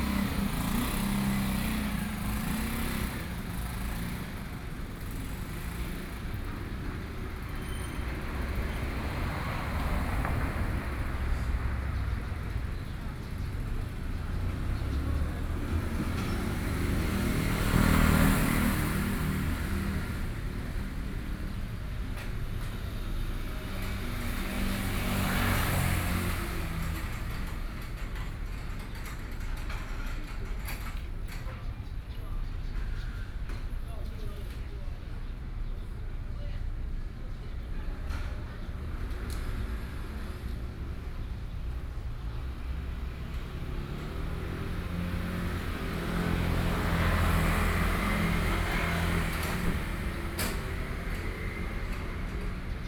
Taoyuan - Mother and child
In the library next to the sidewalk, Sony PCM D50 + Soundman OKM II